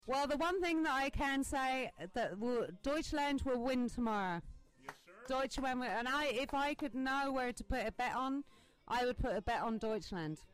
Nürnberg, Backside
comment of a drunk irish lady to the question who´s going to win the EM semifinal Germany vs Turkey.
Nuremberg, Germany